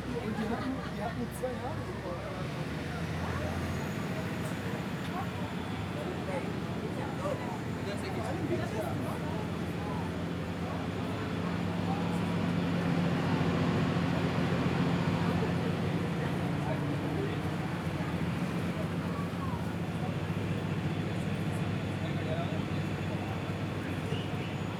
Toronto Division, ON, Canada - Ferry to Toronto Islands
Recorded on the Sam McBride ferry to Toronto Islands, leaving mainland ferry terminal and arriving at Centre Island terminal.